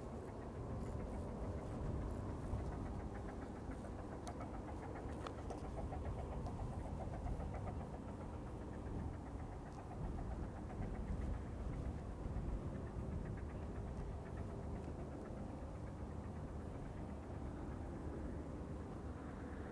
Distant whistle, power station hum, puffing steam train, Ness Cottage, Dungeness Rd, Dungeness, Romney Marsh, UK - Distant whistle, power station hum, puffing steam train
Archetypical Dungeness atmosphere. The quiet but ever present drone of the nuclear power station temporarily broken by a passing Romney, Hythe and Dymchurch Railway miniature steam engine pulling its rattling train of carriages.
South East England, England, United Kingdom, 24 July 2021